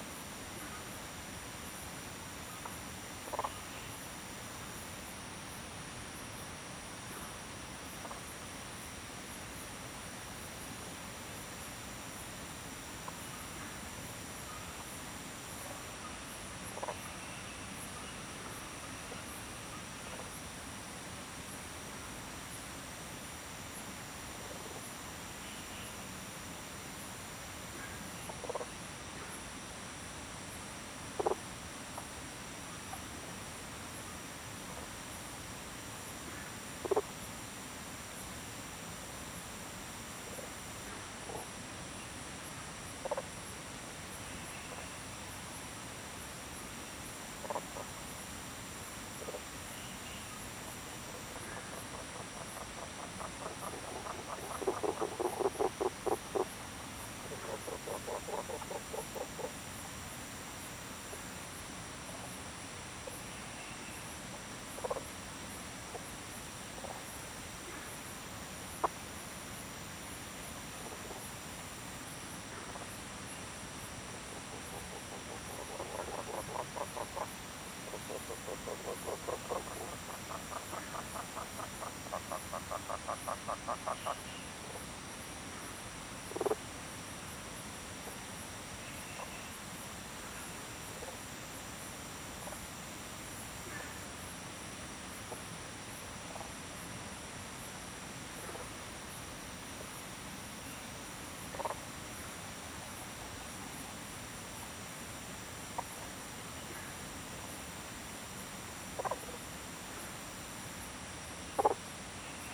{"title": "種瓜路桃米里, Puli Township, Taiwan - Frog Sound", "date": "2016-07-14 01:09:00", "description": "Stream, Frog Sound\nZoom H2n MS+XY", "latitude": "23.95", "longitude": "120.91", "altitude": "546", "timezone": "Asia/Taipei"}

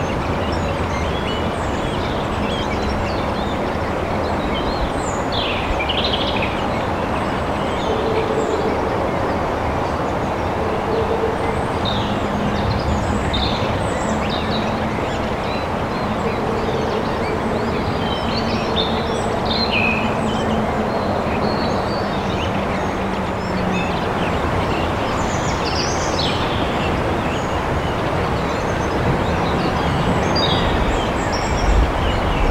{"title": "erkrath, neandertal, waldatmo am hang", "description": "mittags am berghang im laubwald - hören in das tal - leichte winde, vogelstimmen, vereinzelte tierlaute\nsoundmap nrw:\nsocial ambiences/ listen to the people - in & outdoor nearfield recordings", "latitude": "51.22", "longitude": "6.95", "altitude": "100", "timezone": "GMT+1"}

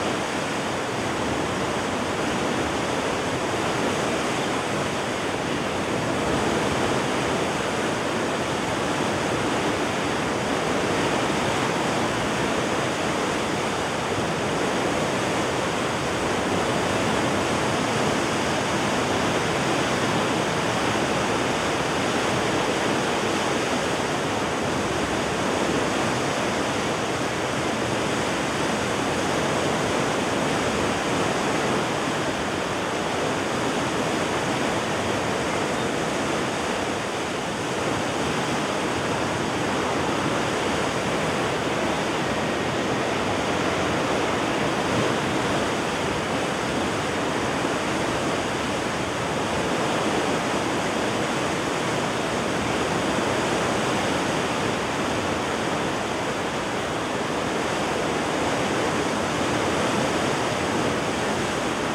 {"title": "Chem. de la Digue du Smetz, Arques, France - Arques - Écluses des Fontinettes", "date": "2022-05-30 10:00:00", "description": "Arques (Pas-de-Calais)\nÉcluses des Fontinettes\nLe sas est vidé avant le passage d'une péniche.", "latitude": "50.73", "longitude": "2.31", "altitude": "9", "timezone": "Europe/Paris"}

